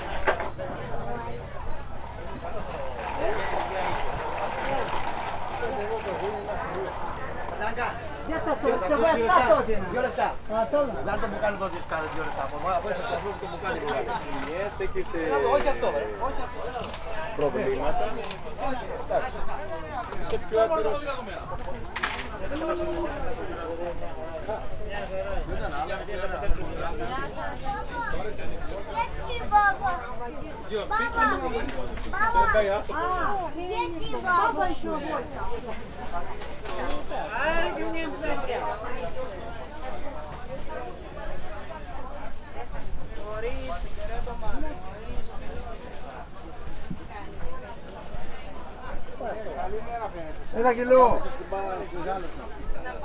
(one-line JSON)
{"title": "Par. Klimatos, Chania, Greece - local marketplace", "date": "2017-09-27 10:57:00", "description": "binaural recording - local marketplace, opens every day on a different street. vendors are pretty vocal about their merchandise. (sony d50 + luhd binaurlas)", "latitude": "35.51", "longitude": "24.01", "altitude": "10", "timezone": "Europe/Athens"}